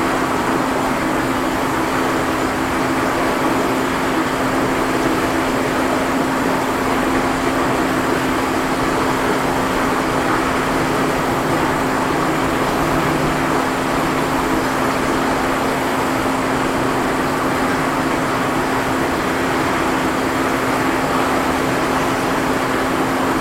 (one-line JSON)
{"title": "Levee Gatewell, Valley Park, Missouri, USA - Levee Gatewell", "date": "2020-08-27 18:35:00", "description": "Meramec Levee Gatewell. A cocktail of sound - rushing water, concrete plant hum, katydids, reflected highway traffic. The gatewell is like a cocktail shaker. Recording device microphones aimed at its strainer.", "latitude": "38.55", "longitude": "-90.48", "altitude": "133", "timezone": "America/Chicago"}